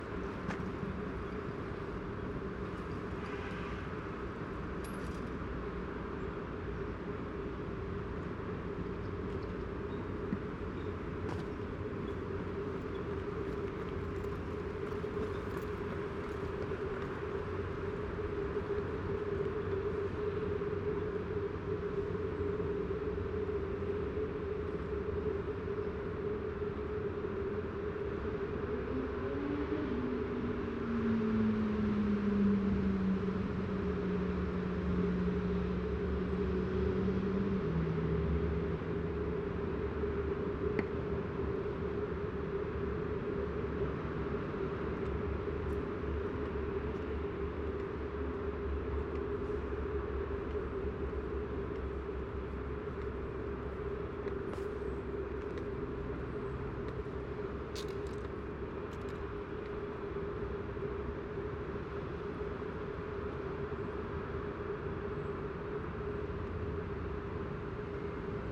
Strawinskylaan, Amsterdam, Netherlands - WTC airco
Drone of airconditioning from the WTC building